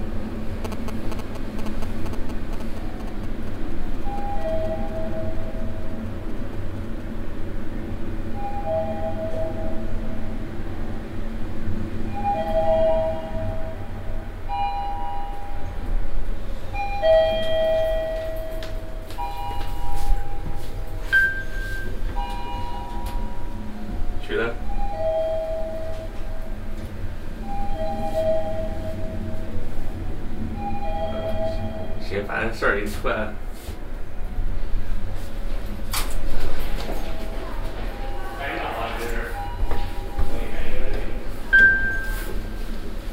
beijing, business centre, aufzüge
beijing cityscape - elevator in a big business building - place maybe not located correctly
international city scapes - social ambiences and topographic field recordings
24 May, 17:52